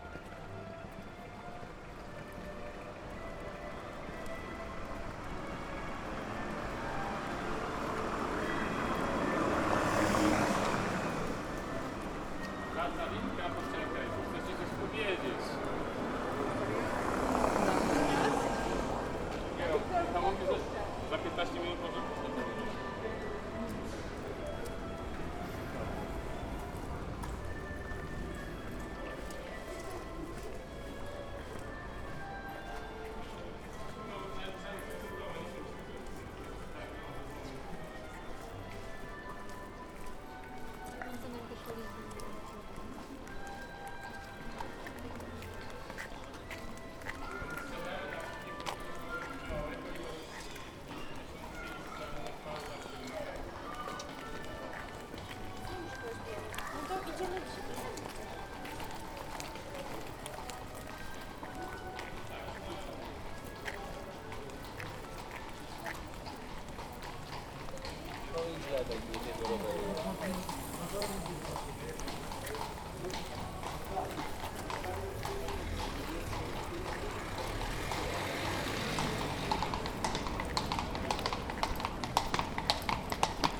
Soundwalk along ul. Szpitalna, Kraków, 13.15 - 13.25